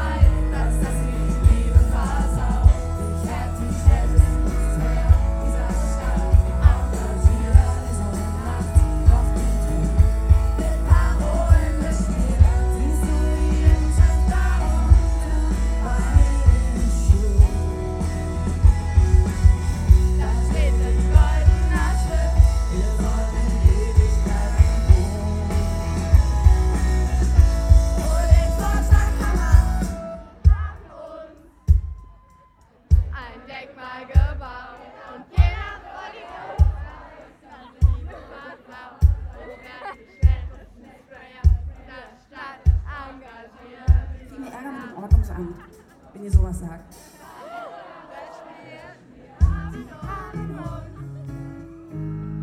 berlin, strasse des - we are heros
surprise for many youngsters at the demo: famous german band Wir Sind Helden gives short beneficial concert